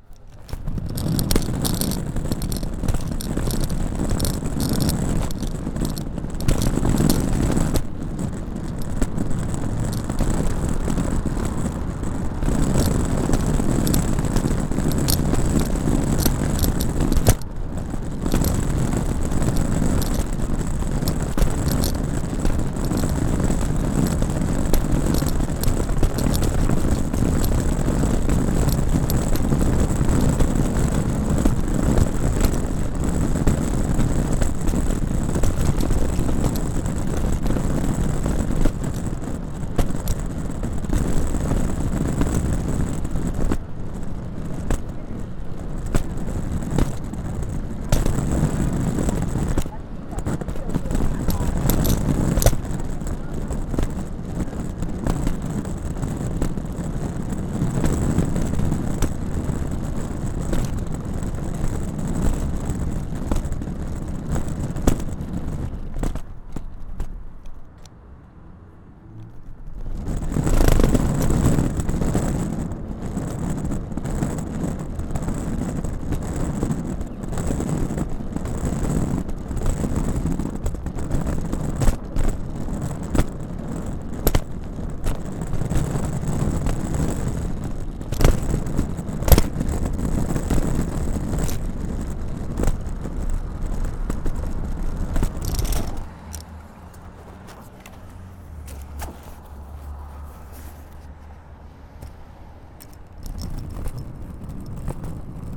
Spring Garden St, Philadelphia, PA, USA - USA Luggage Bag Drag #5
Recorded as part of the 'Put The Needle On The Record' project by Laurence Colbert in 2019.